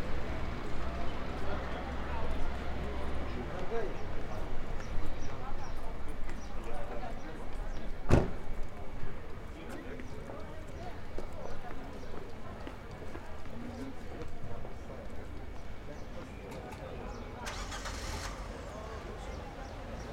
Perugia, Italia - traffic and voice in front of the university
people waiting for the bus, traffic
[XY: smk-h8k -> fr2le]
Perugia, Italy, 21 May 2014, 17:02